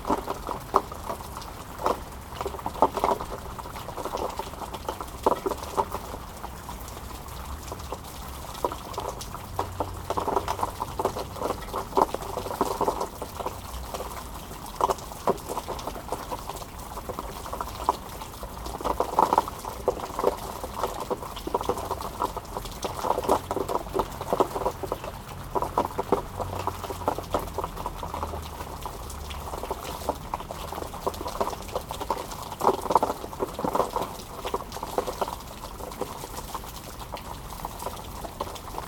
Court-St.-Étienne, Belgique - Sad rain
A sad rain is falling on this abandoned building. Drops fall onto garbages. This was a so sad land... Today, this abandoned building is demolished.